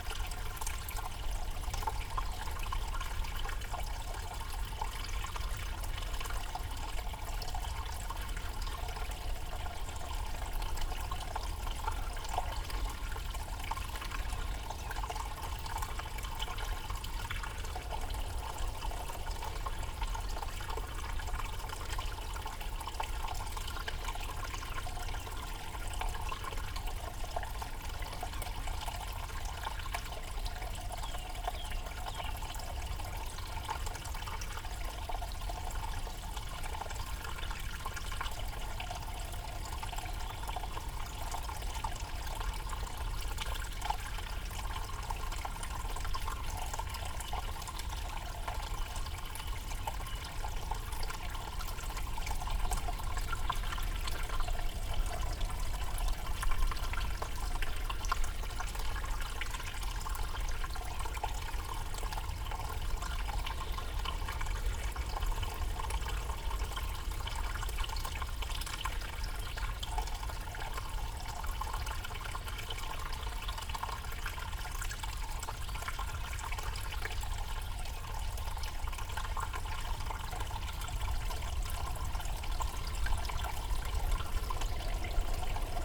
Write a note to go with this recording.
Culvert under the road ... open lavaliers dangled down at one end of a culvert ... bird song ... wren ...